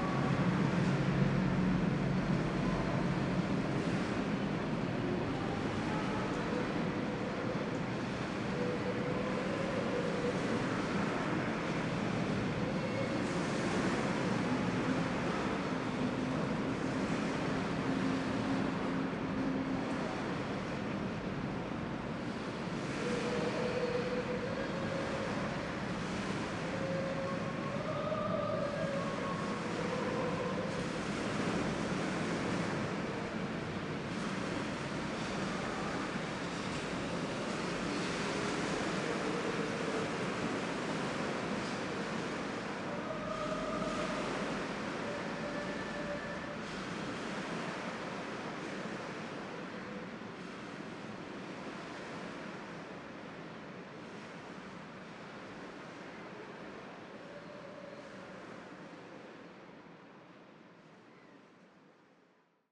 Southbank, London, UK - Under Blackfriars Bridge
Recorded with a pair of DPA4060s and a Marantz PMD661.